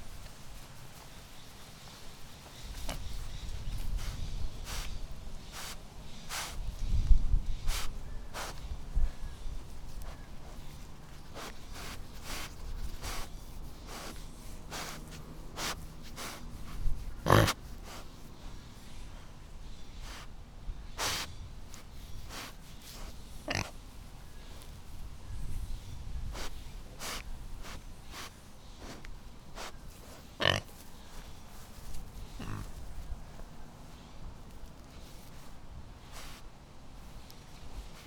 Hog in the House, Portsmouth, Portland, OR, USA - Hank in the House
My friend's wonderful pig, Hank, shuffling and scampering around her house and yard.
Sony PCM D50